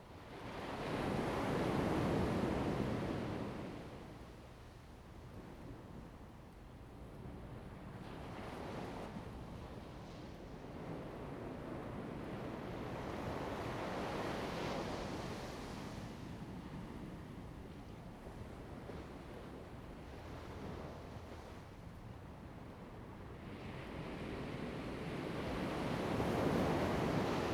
青岐, Lieyu Township - Sound of the waves
Sound of the waves
Zoom H2n MS +XY
福建省, Mainland - Taiwan Border, 4 November 2014, ~12:00